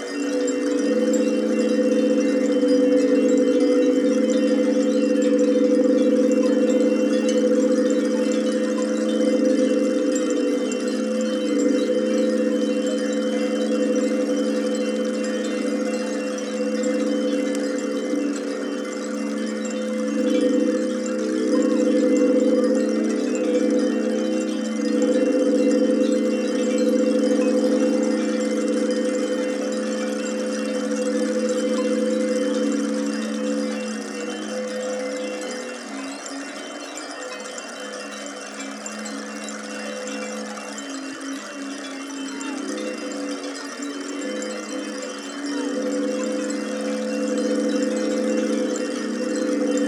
Harmonic Fields, Zarbres Nantong
A stand of clay aeolian ocarinas. About 20 each with a reed tail to point it into the wind.
French artist and composer, Pierre Sauvageot (Lieux publics, France) created a a symphonic march for 500 aeolian instruments and moving audience on Birkrigg Common, near Ulverston, Cumbria from 3-5 June 2011. Produced by Lakes Alive
500 Aeolian instruments (after the Greek god, Aeolus, keeper of the wind) were installed for 3 days upon the common. The instruments were played and powered only by the wind, creating an enchanting musical soundscape which could be experienced as you rest or move amongst the instruments.
The installation used a mixture of conventional and purpose built instruments for example, metal and wood cellos, strings, flutes, Balinese scarecrows, sirens, gongs, harps and bamboo organs. They were organised into six sections, each named after different types of winds from around the world.
Cumbria, UK